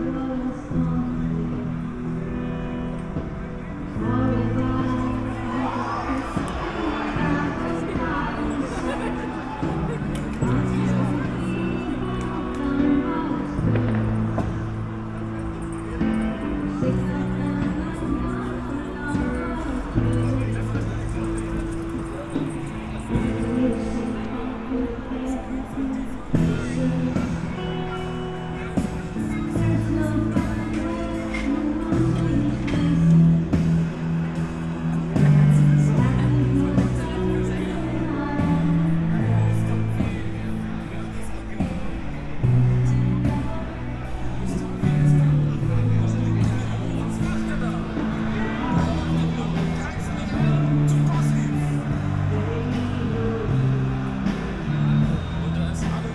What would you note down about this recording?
mixing sounds of soccer world championship public viewing and a band playing during the fête de la musique, Berlin, (unedited log of the radio aporee stream, for a live radio session as part of the ongoing exploration of topographic radio practises, iphone 4s, tascam IXY2, primo em 172)